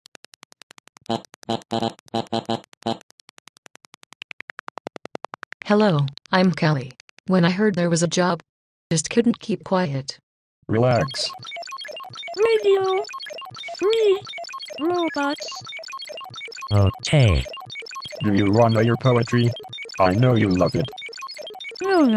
Hong Kong art center, RadioFreeRobots, R.A.M.pkg